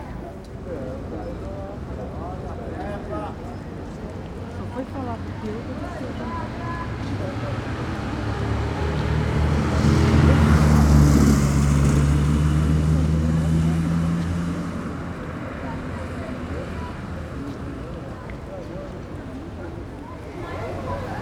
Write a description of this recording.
Panorama sonoro: trechos de vendedores informais pregoando diferentes produtos no Calçadão de Londrina. Um, em especial, estralava um cinto para chamar atenção dos pedestres. Sound panorama: excerpts from informal vendors preaching different products on the Londrina boardwalk. One, in particular, would buckle up a belt to draw the attention of pedestrians.